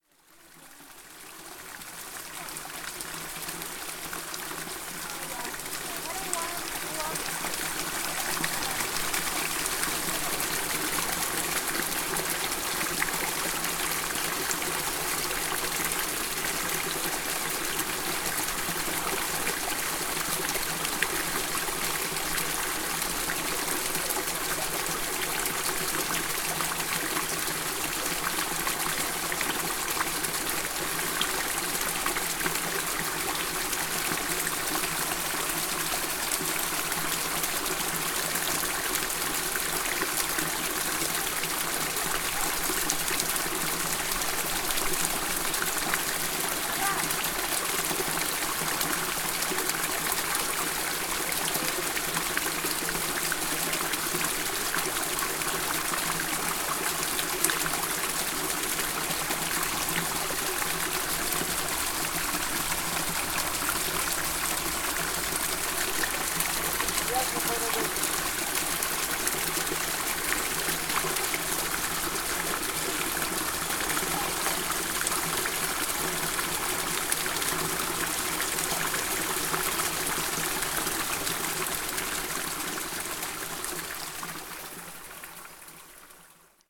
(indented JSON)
{
  "title": "R. da Sra. da Estrela, Portugal - Caminhada PreAmp S4",
  "date": "2020-07-22 17:10:00",
  "description": "Tascam DR-05 - PreAmp - Projeto Abraça a Escola",
  "latitude": "40.25",
  "longitude": "-7.48",
  "altitude": "419",
  "timezone": "Europe/Lisbon"
}